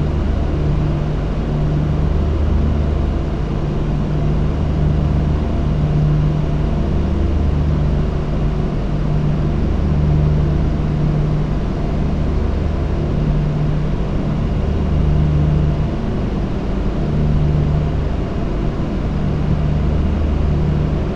Cooling Units Drone
All the perishable products waiting at the Port of Santa Cruz in truck trailers with refrigeration units turned on produce an all-masking drone.